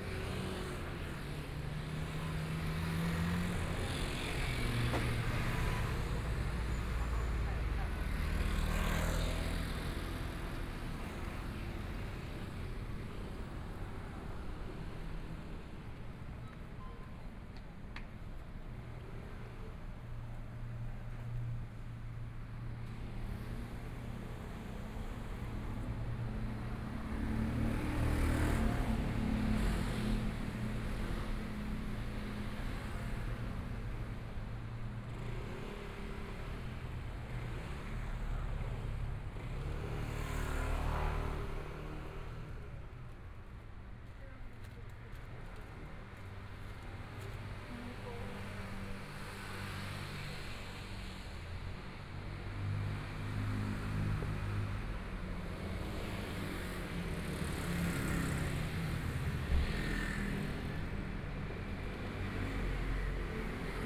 Jinzhou St., Zhongshan Dist. - Soundwalk
Walk from the MRT station to start, Walking on the street, Various shops voices, Traffic Sound, Binaural recordings, Zoom H4n+ Soundman OKM II
Taipei City, Taiwan